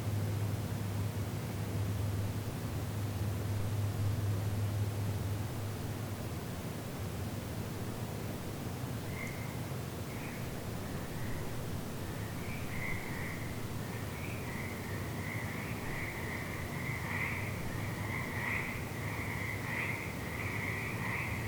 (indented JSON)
{
  "title": "Wind & Tide Playground - Frogs",
  "date": "2020-04-11 23:39:00",
  "description": "I discovered a couple nights ago that a frog chorus starts up around midnight each night, somewhere in the swampy overgrowth across the street — and mysteriously pauses now and then. It’s impossible to tell exactly where it originates, so the cover photo was taken in the general vicinity, in the daylight.\nI would've maybe never discovered this were it not for COVID-19, which closed down my health club, which means I've been occasionally staying up late instead of swimming first thing in the morning. Who knows how long this has been going on?\nMajor Elements:\n* Intermittent frog chorus\n* Distant cars and motorcycles\n* Airplanes\n* Distant train\n* One close car driving past, stopping, and turning around\n* Rare midnight birds",
  "latitude": "47.88",
  "longitude": "-122.32",
  "altitude": "120",
  "timezone": "America/Los_Angeles"
}